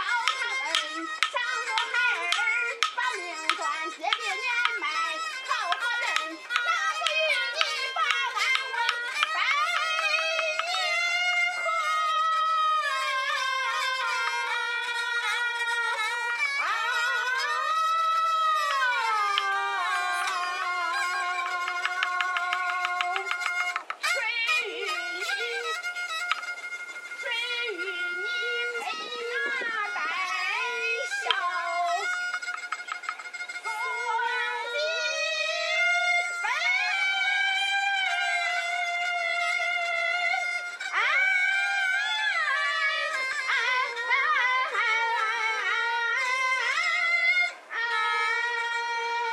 中国河南省漯河市源汇区五一路377号 - Yu Opera (河南豫剧) performed by the unknown folks
This is a general recording location. Can't remember the exact one. Yu opera or Yuju opera, sometimes known as Henan bangzi (Chinese: 河南梆子; pinyin: Hénán Bāngzi), is one of China's famous national opera forms, alongside Peking opera, Yue opera, Huangmei opera and Pingju. Henan province is the origin of Yu opera. The area where Yu opera is most commonly performed is in the region surrounding the Yellow River and Huai River. According to statistical figures, Yu opera was the leading opera genre in terms of the number of performers and troupes.